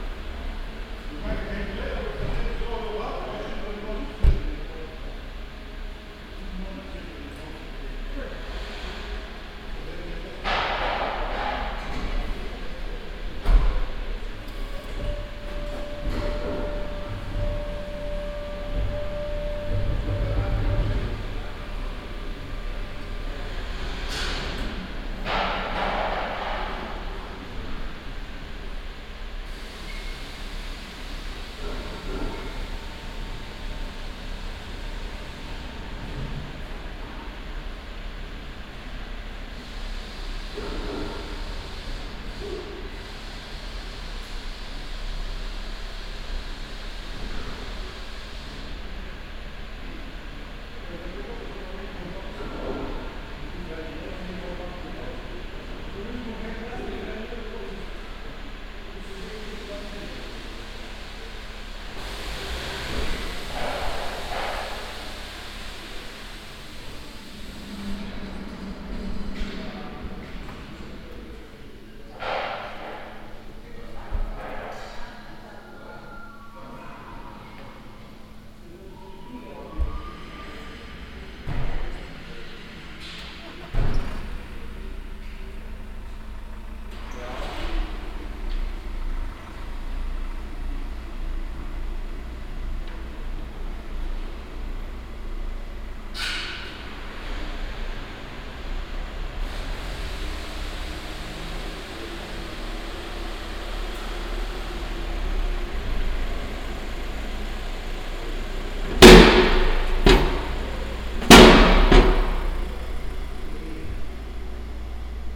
wilwerwiltz, station de controlee
At the regional association for the technical inspection of cars. A long row of cars waiting for the inspection. The sound of the control routine recorded inside of the inspection hall.
Wilwerwiltz, Kontrollstation
Bei der regionalen Filiale der technischen Kontrollstation für Autos. Eine lange Reihe von Autos wartet auf die Inspektion. Das Geräusch von der Kontrollroutine, aufgenommen in der Inspektionshalle.
Wilwerwiltz, station de contrôle
Chez l’association régionale pour le contrôle technique des véhicules. Une longue file de voitures attend pour le contrôle. Le bruit de la routine du contrôle enregistré dans le hall d’inspection.